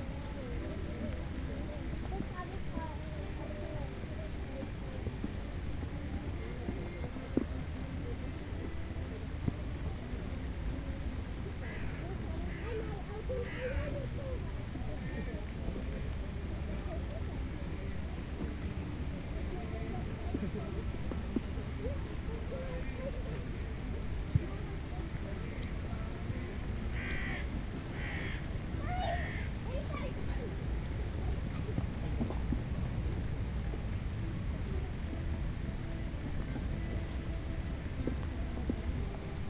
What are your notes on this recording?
recorder in the rain, someone plays an accordion, the city, the country & me: september 4, 2010